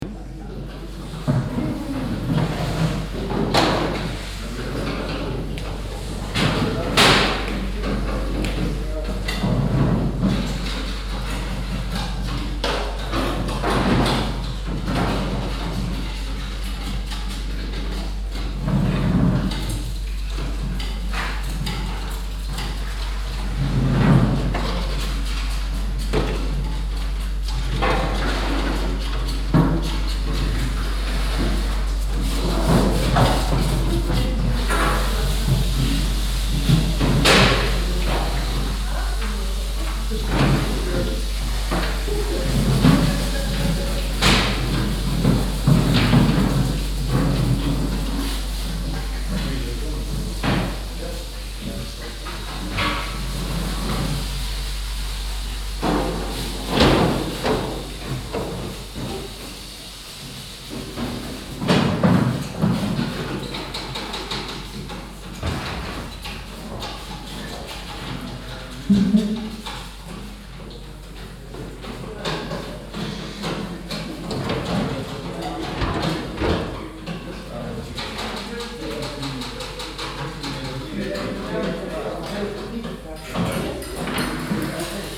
Bastendorf, Tandel, Luxemburg - Bastendorf, bio nursery
Innerhalb einer Arbeitshalle der Bio Gärtnerei "am gärtchen". Die Klänge von Menschen die Gemüse putzen und Transportboxen reinigen.
Inside a working hall of the bio nursery "am gärtchen". The sounds of people washing vegetables and cleaning transport boxes while talking.